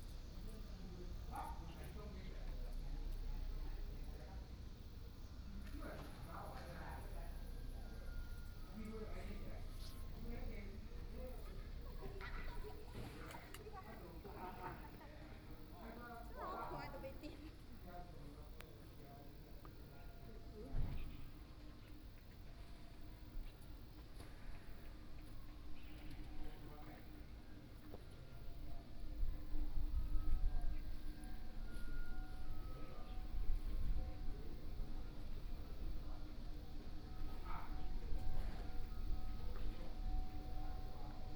永安宮, 蘇澳鎮港邊里 - in front of the temple
In the square, in front of the temple, Hot weather, Traffic Sound, Birdsong sound, Small village
28 July 2014, 17:46